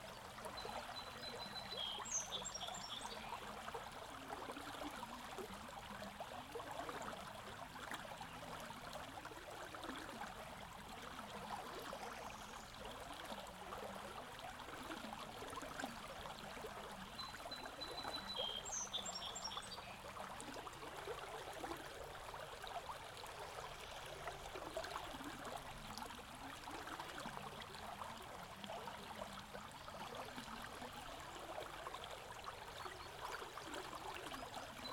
Big Creek, Indian Camp Creek Park, Foristell, Missouri, USA - Big Creek
Big Creek sings.
Missouri, United States, 30 May